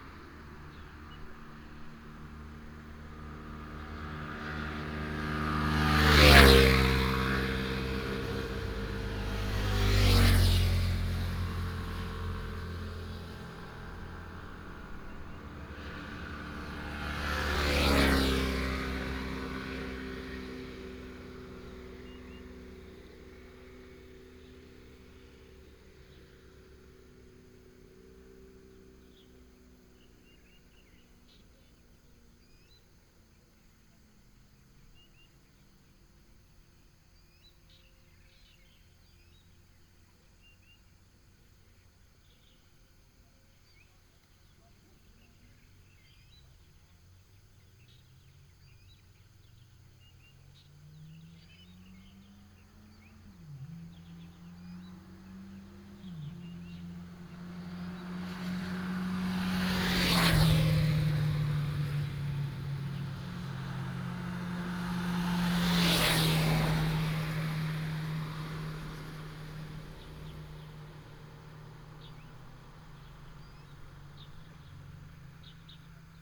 Mountain road, There was a lot of heavy locomotives in the morning of the holidays, The sound of birds, Binaural recordings, Sony PCM D100+ Soundman OKM II